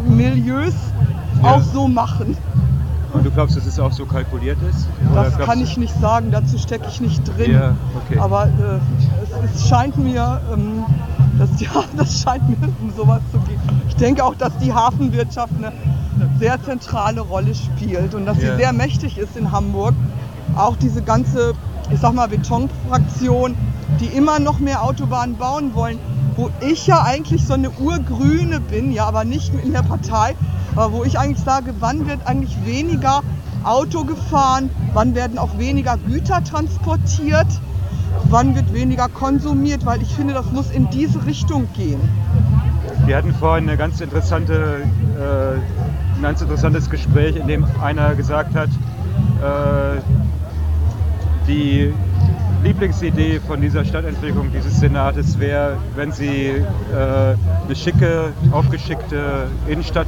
Demonstration gegen den Neubau einer Autobahn in Wilhelmsburg 31.10.2009
Warum der Neubau Wilhelmsburg zerschneidet. Die Autobahn als soziale Frage.